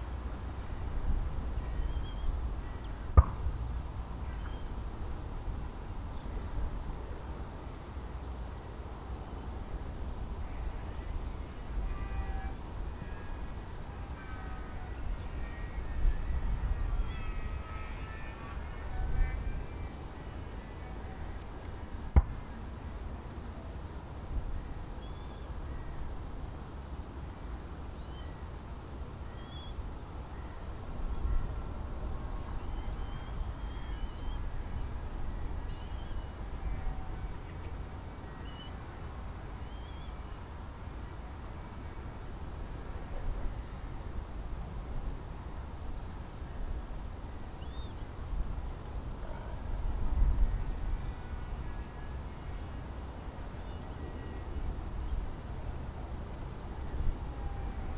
Laverstock, UK - 025 Birds and a shotgun
Salisbury, UK, 25 January